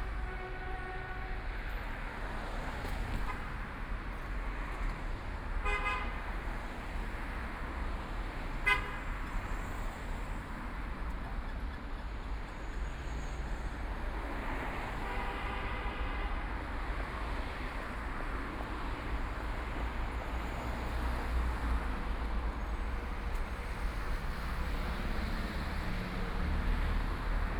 國順東路, Yangpu District - walking in the Street
Walking in the street, Traffic Sound, Binaural recording, Zoom H6+ Soundman OKM II